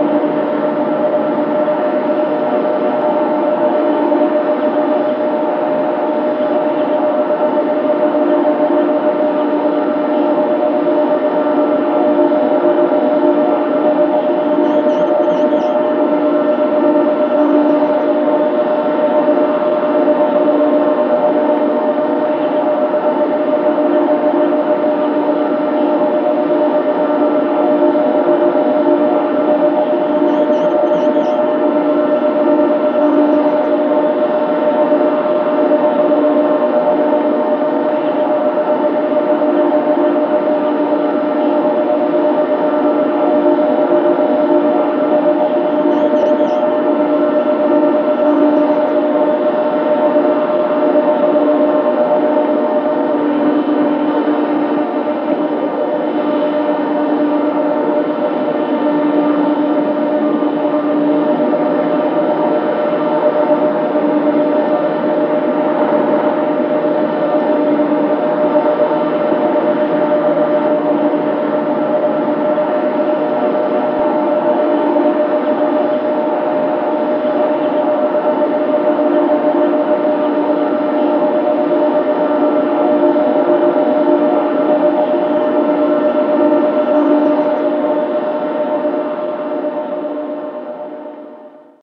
Wind Power Plants Recordings in Coppenbrügge.
ZOOM H4n PRO Recorder
Shotgun Microphone